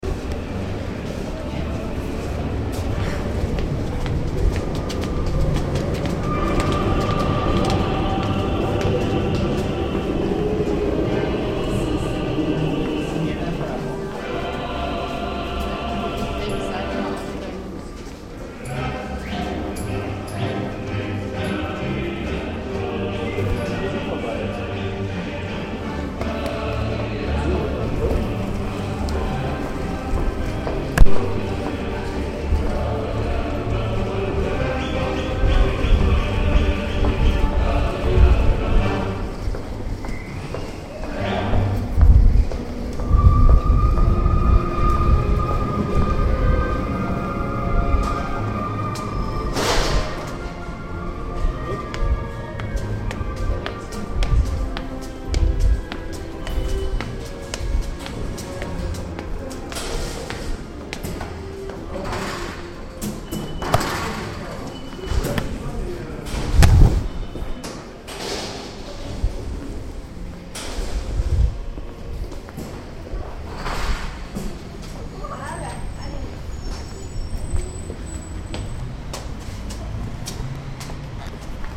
Paris, Metro, Choir singing
When you rush through the tunnels of the Paris Metro it can happen that you encounter a complete russian choir!